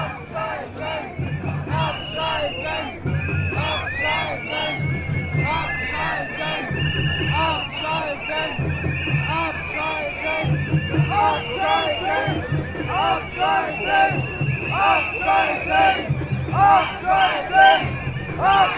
berlin, klingelhöferstr. - anti nuclear power protests in front of conservative party CDU headquarter
anti nuclear demo passing the headquarter of the conservative party CDU, volume rising...
Berlin, Germany, 26 March 2011